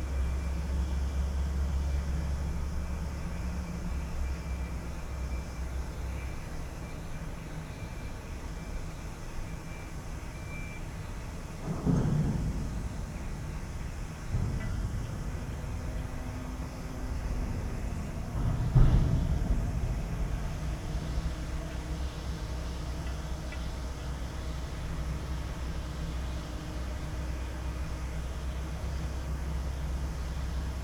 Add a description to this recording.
gas installations hissing with cars, distant bells and city ambience. Soundfield Mic (ORTF decode from Bformat) Binckhorst Mapping Project